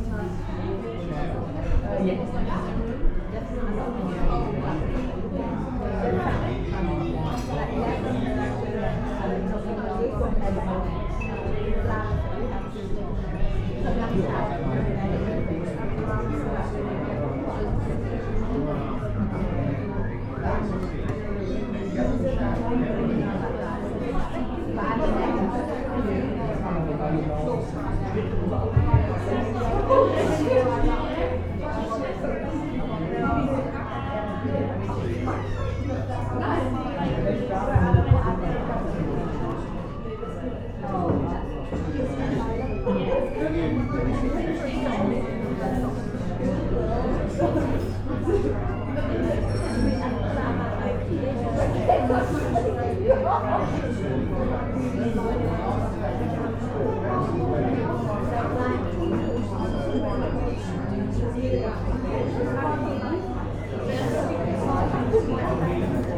Busy Lunchtime, Malvern, UK
Happy customers in a busy cafe at lunchtime.
4 September 2022, 12:39